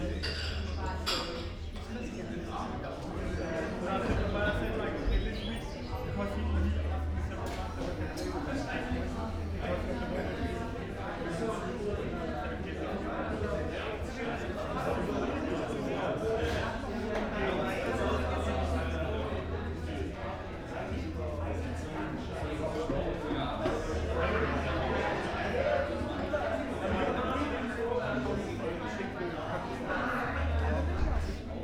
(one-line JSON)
{"title": "Cafe Kirsche, Böckhstraße, Berlin - cafe ambience", "date": "2018-02-10 15:35:00", "description": "Saturday afternoon, Cafe Kirsche, former pharmacy, corner Kottbusser Damm / Boeckhstr., one of the many new stylish cafes in this neighbourhood, crowded cafe ambience\n(Sony PCM D50, Primo Em172 mics)", "latitude": "52.49", "longitude": "13.42", "altitude": "37", "timezone": "Europe/Berlin"}